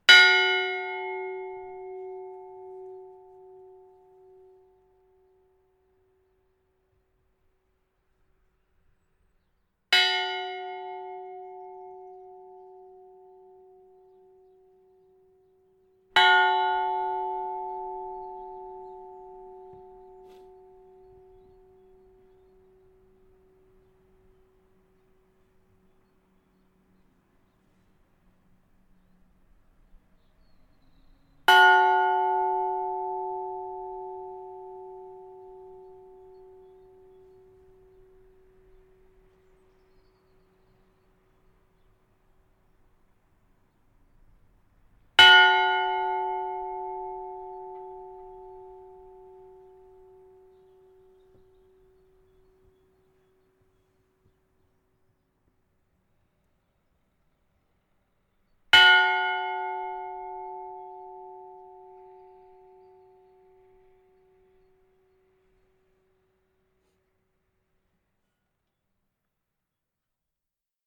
Grand'place, Saint-Amand-les-Eaux, France - Bancloque de l'échevinage de St-Amand-les-Eaux
Bancloque de l'échevinage de St-Amand-Les-Eaux
cloche civile de 1620
“Ceste cloche at este faicte en l’an 1620 estant Dom Larvent Dorpere eslev abbe de st Amand et Dom Antoine Ruffin Me d’hostel et receveur general”
France métropolitaine, France, 1 March 2021, 10:00